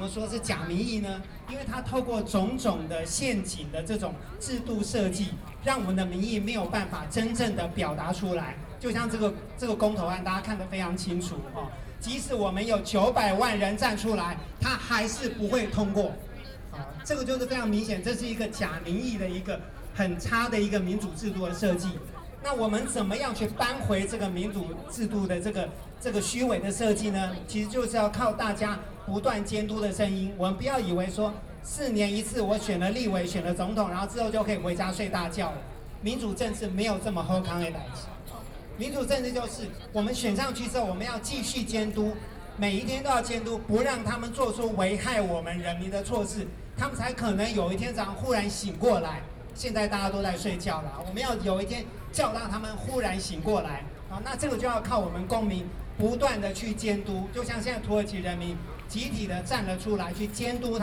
Chiang Kai-shek Memorial Hall, Taipei - Speech
Taiwan's well-known theater director, Speech against nuclear power, Zoom H4n + Soundman OKM II